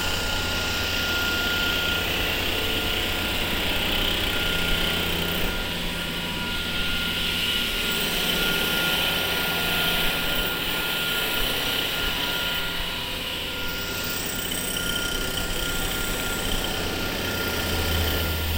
Presslufthammer/Betonschneidemaschine. Große Bergstraße. 07.10.2009 - Renovierungsarbeiten im Forum Altona